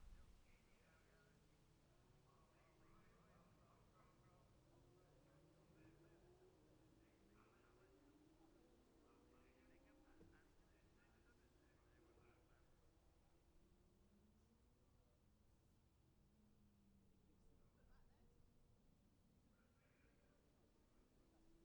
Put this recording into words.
Gold Cup 2020 ... Classic Superbikes ... Memorial Out ... dpa 4060s to Zoom H5 ...